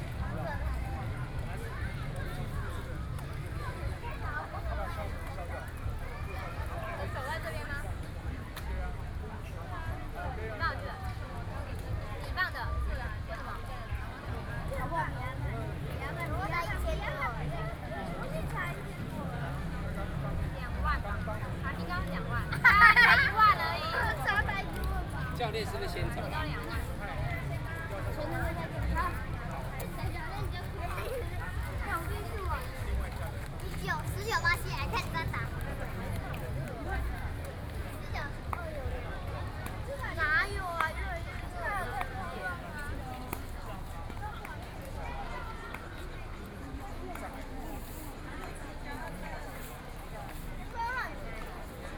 The crowd, Children's sports competitions, Sony PCM D50, Binaural recordings